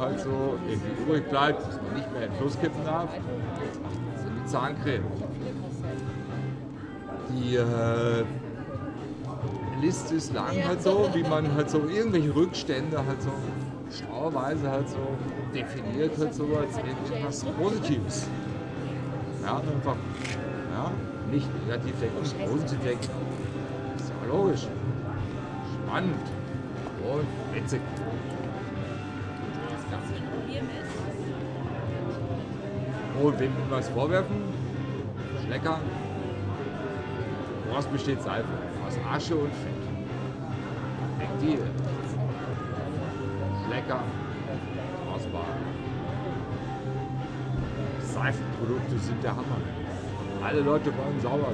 berlin, ohlauer straße: vor club - the city, the country & me: deodorant loather
drunken guy explains his deodorant philosophy
the city, the country & me: june 27, 2010
Berlin, Germany, 27 June 2010, 23:43